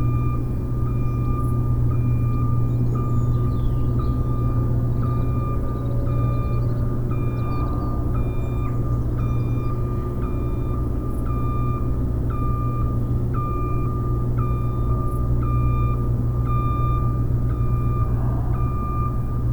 Sounds of the Night - 2, Malvern, UK
This recording starts at 2 am on a busy night with workmen removing the stage after a show, traffic, dogs, voices, muntjac, trucks, jets and alarms. The mics are on the roof again facing east into the wind towards the Severn Valley with the slope of the land and the breeze bringing the sounds up from below. Recorded in real time by laying the mics on the roof which is angled at 45 degrees. This seems to exaggerate the stereo image and boost the audio maybe by adding reflected sound. The red marker on the map is on the area where most of the sounds originate.
MixPre 6 II with 2 Sennheiser MKH 8020s in a home made wind jammer.